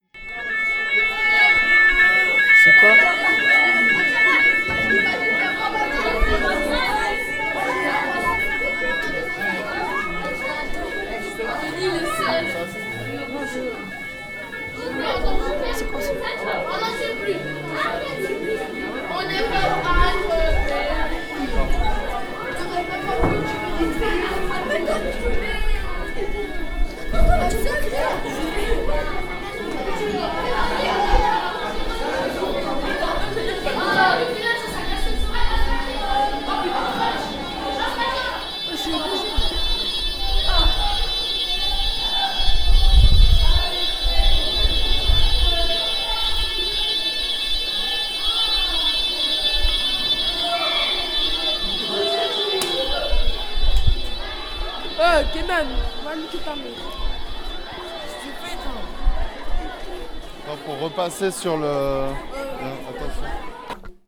Collège Twinger, rue Ovide, Strasbourg, France - Alarm testing, collège Twinger, Strasbourg, FRANCE
Recording by the student, during an alarm test on friday morning, with ZOOM H2.
LATI Program 2017